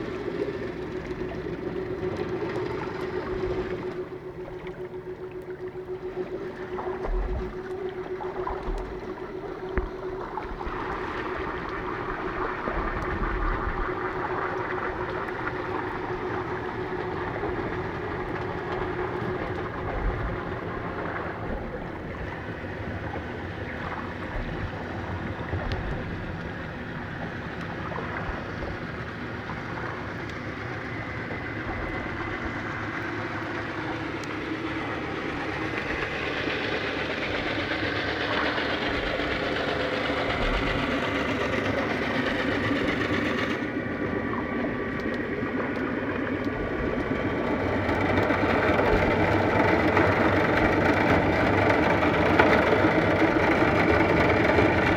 When the large ferry boat that links the small island of Tilos to Piraeus arrives in port, the smaller yachts and fishing boats have to leave their berths while it unloads cars and passengers. this recording captures this process. small boats start engines and leave around 3', Diagoras arrives around 12' and leaves around 23'30". Aquarian audio hydrophone / Tascam DR40